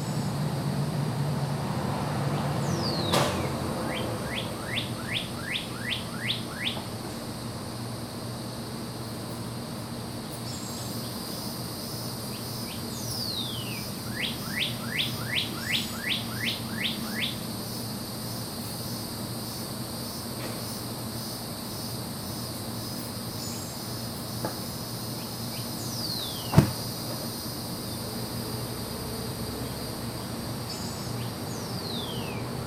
Suffex Green Ln NW, Atlanta, GA, USA - A Summer Evening w/ Cicadas
The sound of a typical summer evening near Atlanta, GA. Aside from the typical neighborhood sounds captured in previous recordings, the cicada chorus is particularly prominent around the evening and twilight hours. They start every day at about 5:30 or 6:00 (perhaps even earlier), and they continue their chorus until nightfall (at which time we get a distinctly different chorus consisting of various nocturnal insects). These are annual cicadas, meaning we hear them every single year, and are thus distinct from the 17-year cicadas being heard in other places in the country.
Georgia, United States, 28 June 2021